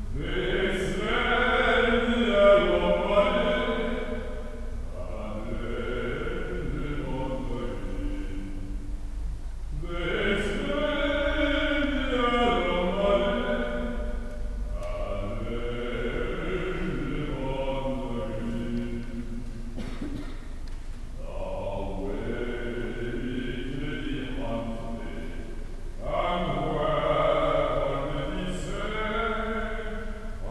Edinburgh. Church of St Mary. Applause. - Edinburgh. Church of St Mary. Singer